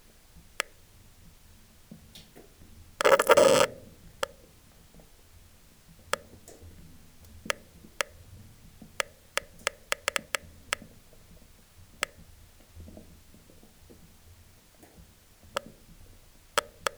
Neufchâteau, Belgique - Abandoned slate quarry
How to explain this sound ?! It's quite complicate.
We are in an underground slate quarry. It's a dead end tunnel.
In aim to extract the bad rocks, miners drill into the stone. They make a long drilling, diameter 3 cm, lenght 4 meters. At the end of the drilling, they put some explosive.
Here, it's a drilling. As it was the end of the quarry (bankruptcy), they didn't explode the rocks. So, the long drilling remains, as this, since a century.
Inside the stone, there's a spring.
Water is following a strange way inside the fracking.
This is the sound of the water inside the drilling.